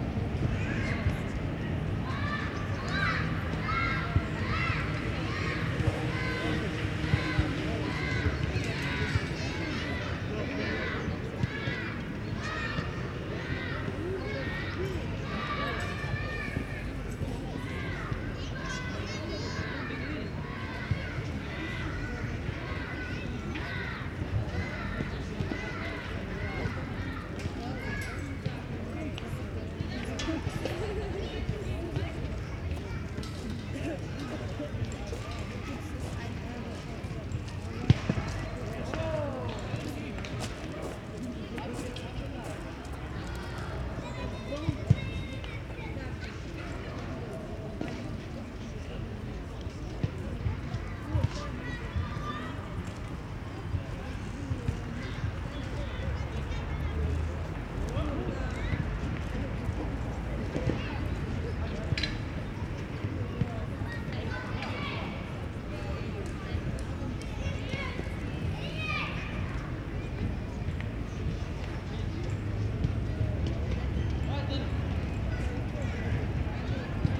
Weichselplatz, playground, sunday afternoon ambience